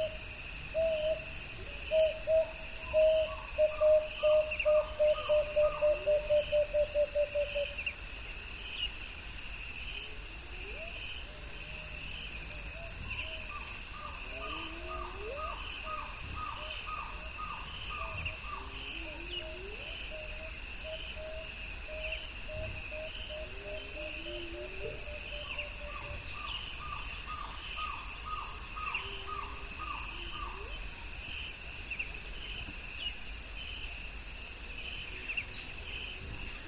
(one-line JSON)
{"title": "Tsendze Rustic Campsite, Kruger Park, South Africa - Dawn Chorus", "date": "2016-10-11 05:07:00", "description": "First sounds of day. Ground Hornbills, Hippos and much more. EM172's on a Jecklin Disc to SD702", "latitude": "-23.56", "longitude": "31.44", "altitude": "311", "timezone": "Africa/Johannesburg"}